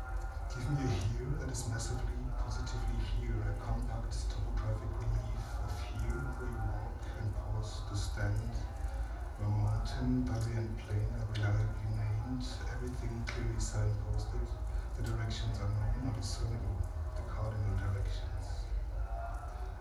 berlin, lychener straße: ausland - the city, the country & me: udo noll performs -surfing the gray line-
udo noll performs -surfing the gray line- during the evening -fields of sound, fields of light- curated by peter cusack
the city, the country & me: february 6, 2015
Berlin, Germany